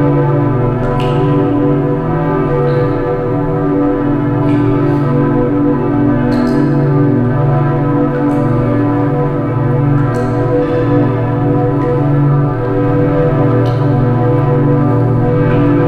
Gladbach, Mönchengladbach, Deutschland - mönchengladbach, alter markt, city church
Inside the old city church. The sound of evening bells coming from outside into the church hall accompanied by water sounds and finally a tune played on a bottle glass instrument.
soundmap nrw - social ambiences, art places and topographic field recordings